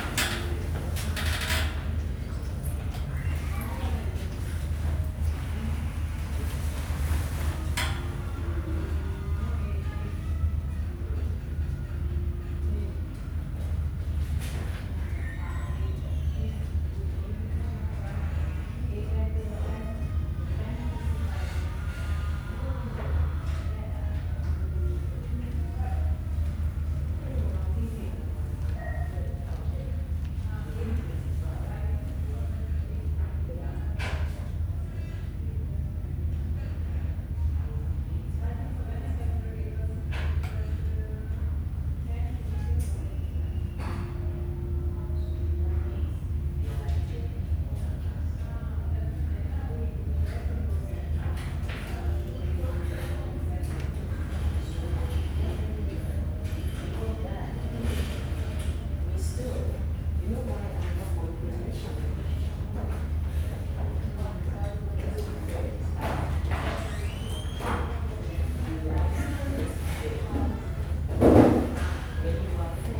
Homerton Hospital, Clapton, London, UK - Waiting for a blood test, Homerton Hospital
Usually when I go to this hospital for a blood test it is full of people waiting. Today was amazingly quiet, only 3 others. Even the nurse remarked on nobody being there. The piercing bleeps were just as loud though and it's remarkable how much low frequency sound is present in hospitals.
Greater London, England, United Kingdom, 24 October 2019